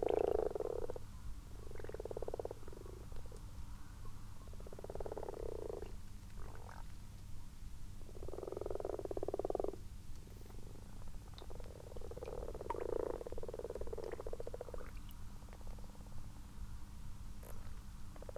Malton, UK - frogs and toads ...
common frogs and common toads in a garden pond ... xlr sass on tripod to zoom h5 ... time edited unattended extended recording ... bird calls ... pheasant at end of track ... the pond is now half full of frog spawn ...
Yorkshire and the Humber, England, United Kingdom, 21 March 2022, 00:32